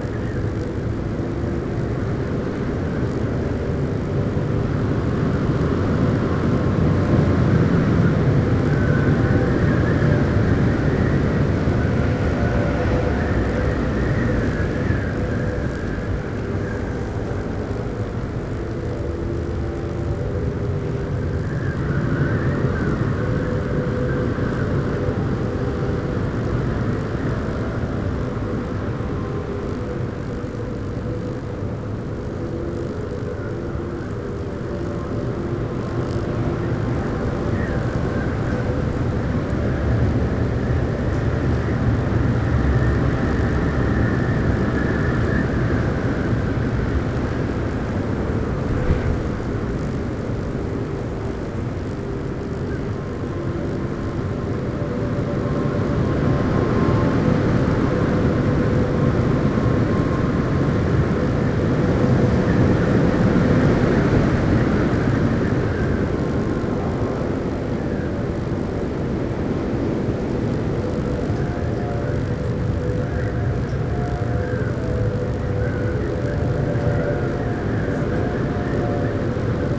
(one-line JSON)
{"title": "Mimet, France - Le vent", "date": "2017-05-07 09:29:00", "description": "Le vent à travers un pylone THT\nWind through a THT pylon", "latitude": "43.40", "longitude": "5.49", "altitude": "495", "timezone": "Europe/Paris"}